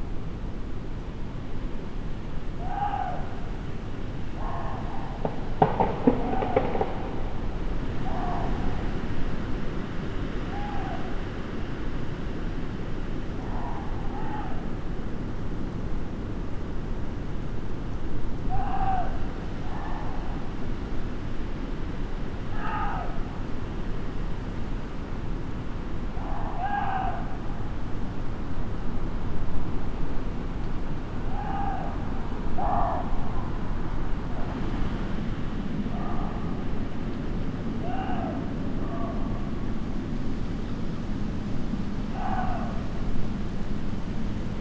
Muntjack on Malvern Hills, Malvern, UK - Muntjack
These very shy and infrequent visitors to our area are heard moving across the landscape of the hills on a windy night. I believe there are 2 of these deer in this clip captured with the microphones on the roof of the house.
I have placed the location where I believe the Muntjacks might be.
MixPre 6 II with 2 x Sennheiser MKH 8020s.
England, United Kingdom, 14 June 2021